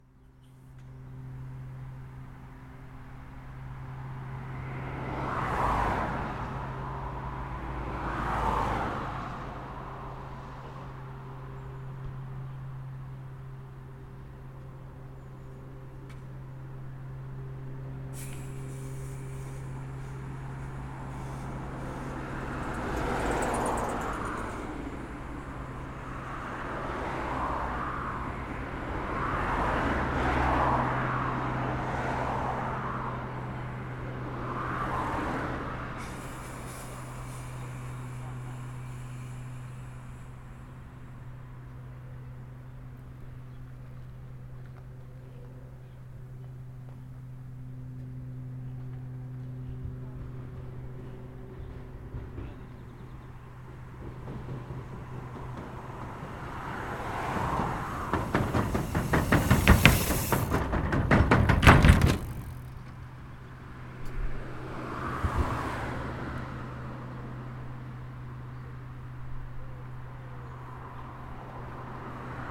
Près de la passerelle cycliste, un peut plus loin un groupe de cyclistes anglais répare une crevaison. Beaucoup de trottinettes électriques aussi maintenant sur cette piste.